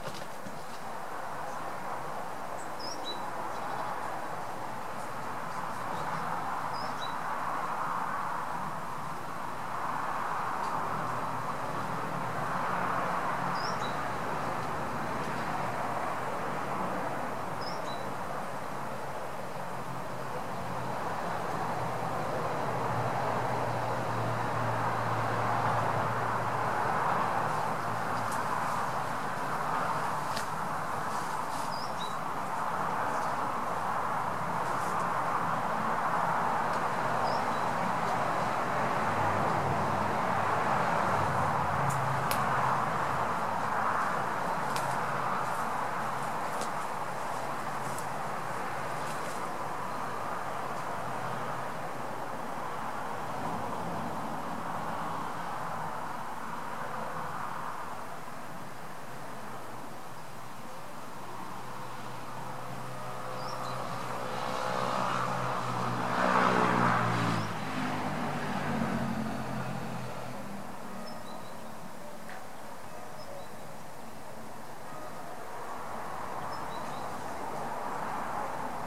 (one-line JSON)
{"title": "Fonsorbes, France - Garden sounds in peri-urban areas", "date": "2015-09-10 18:50:00", "description": "Between road and gardens the sounds of my district in the twilight", "latitude": "43.54", "longitude": "1.23", "altitude": "200", "timezone": "Europe/Paris"}